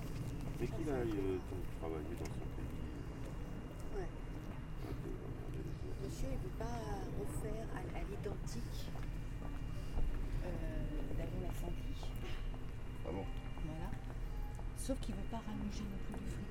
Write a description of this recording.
On the Maintenon station platform, my brother Nicolas will catch his train to Paris. This is an early quiet morning on the platform, with a lot of workers commuting to Paris.